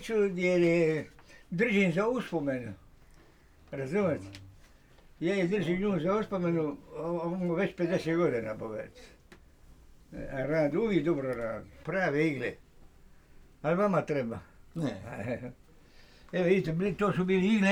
Stari Grad, old crafts - the oldest shoemaker in town
Mice Cesareo in his workshop, demonstrating his tools
28 August 1996, ~12:00